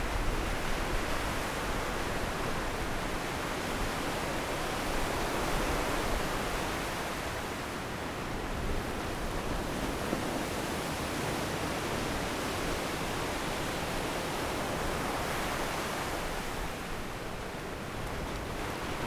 Taitung City, Taiwan - Sound of the waves
Sound of the waves, Zoom H6 M/S